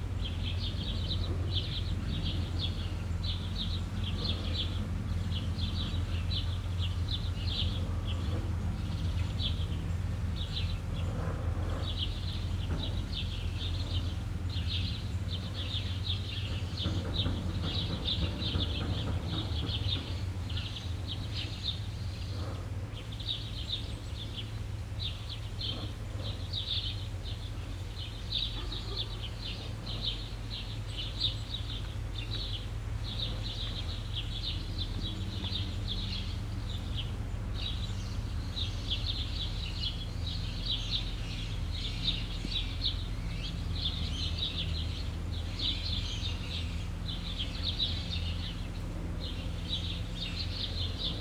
Basbellain, Luxemburg - Basbellain, church cemetery, church bell
Auf dem Kirchfriedhof an einem Sommerabend. Die abendliche Atmosphäre des Ortes mit Kuhrufen, Vögeln in den Bäumen, leichtem Straßenverkehr, einem Flugzeug und die viertel vor neun Glocke der Kirche.
On the village cemetery on a summer evening. The town atmosphere with a cow calling, birds in the trees, light street traffic, a plane in the sky and the church bell at a quarter to nine.
Troisvierges, Luxembourg, 4 August 2012, ~21:00